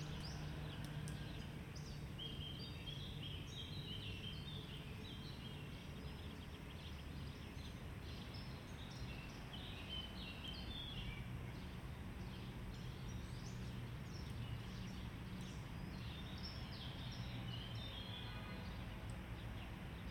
A groven in the Saxon Garden in Warsaw - chirping birds - starlings - crows - distant cars and trams - distant people talking
Recording made with Zoom H3-VR, converted to binaural sound
województwo mazowieckie, Polska, 11 May 2022, 17:35